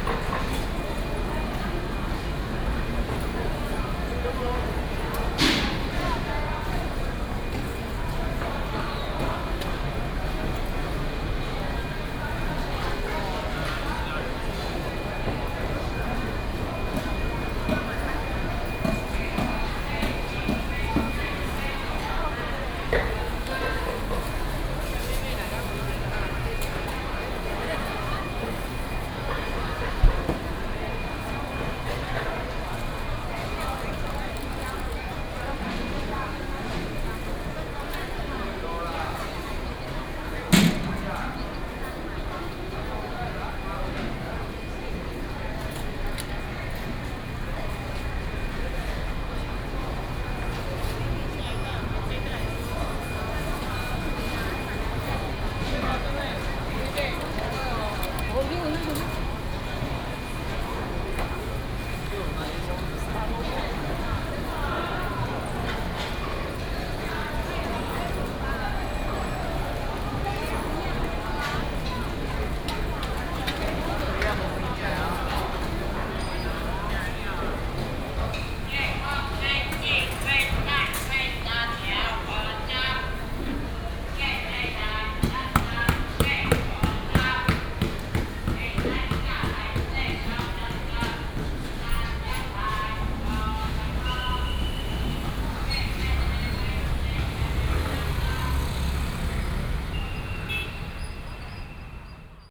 和緯黃昏市場, West Central Dist., Tainan City - Traditional market
Walking at Traditional market, Traffic sound, Traditional market
Binaural recordings, Sony PCM D100+ Soundman OKM II